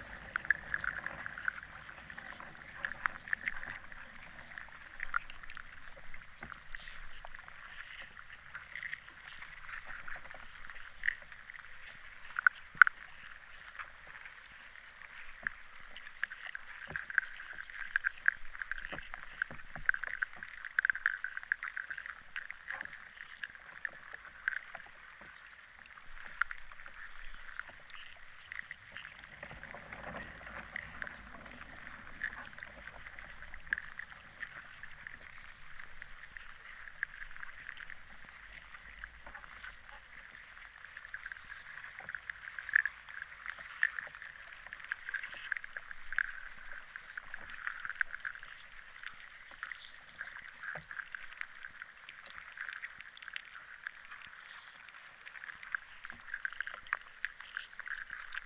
Lithuania, Vyzuona river underwater
Hydrophone recording of "snake" river.
Utenos rajono savivaldybė, Utenos apskritis, Lietuva, 4 May 2021